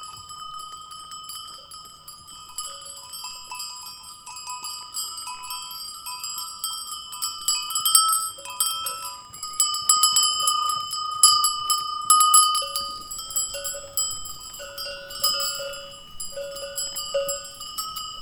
Montargil, Ponte de Sor Municipality, Portugal - goat bells
Goats on a field, bells ringing, Foros dos Mocho, Montargil, stereo, church-audio binaurals clipped on fence, zoom h4n
14 February, ~09:00